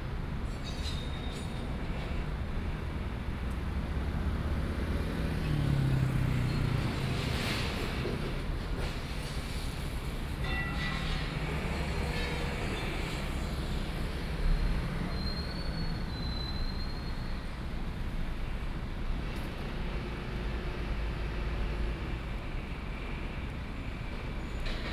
Sound from construction site, Traffic Sound